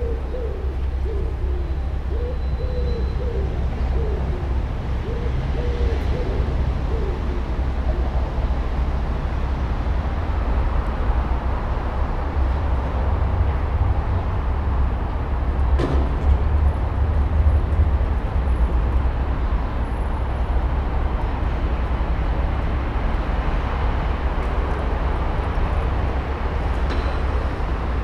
Mostowa, Gorzów Wielkopolski, Polska - Cathedral.
Cathedral renovations after the big fire in 2017 and the city noises.
23 April 2020, 16:03